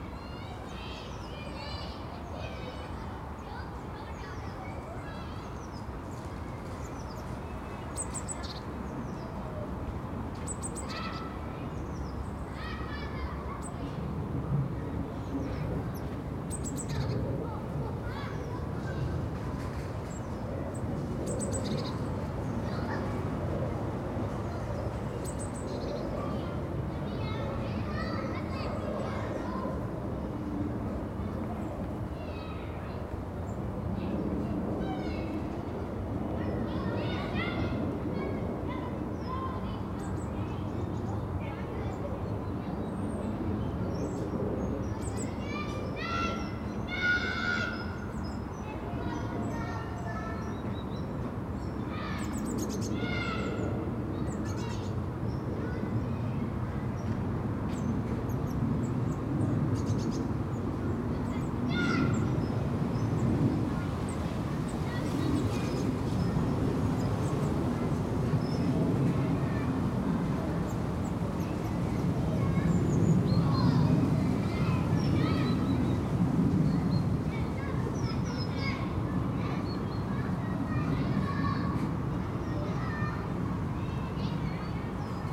Contención Island Day 21 inner northwest - Walking to the sounds of Contención Island Day 21 Monday January 25th
The Poplars High Street Causey Street Gordon Avenue Hawthorn Road Linden Road
Stand in the grounds of All Saints Church
It is playtime at the nearby school
An insect hotel
six Jackdaws
one black-headed and two herring gulls
one tit
A few people pass
It is windier than on previous days
though still cold